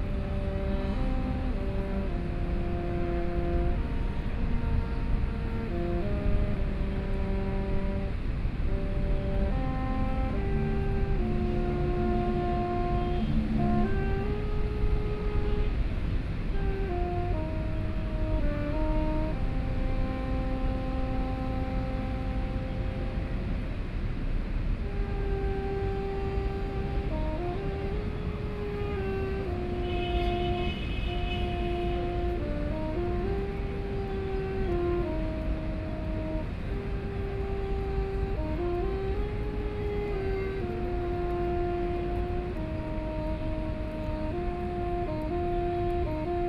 National Chiang Kai-shek Memorial Hall - Square the night
An old man is practicing saxophone playing, Sony PCM D50 + Soundman OKM II
Zhongzheng District, Taipei City, Taiwan, 27 September 2013